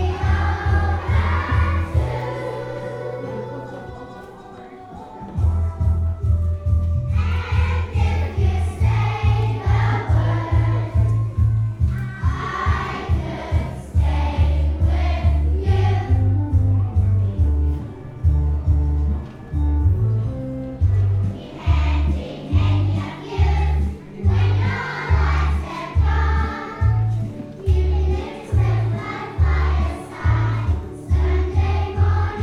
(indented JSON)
{
  "title": "neoscenes: When yer 64, Brunswick School performance",
  "date": "2010-12-02 18:44:00",
  "latitude": "-39.84",
  "longitude": "175.03",
  "altitude": "136",
  "timezone": "Pacific/Auckland"
}